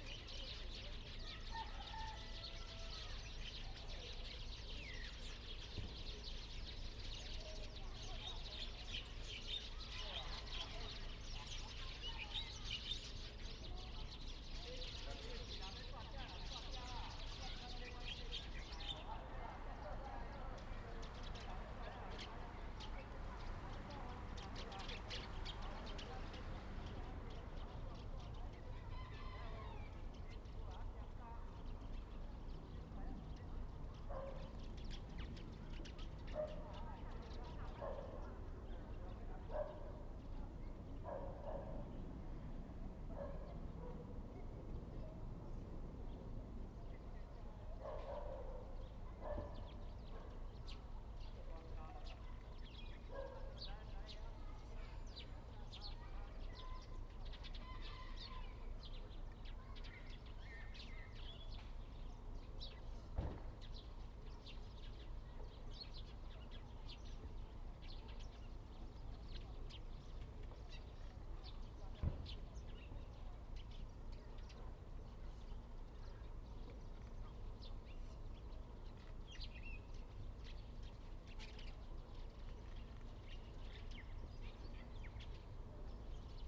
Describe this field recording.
Birds singing, Chicken sounds, Zoom H2n MS+XY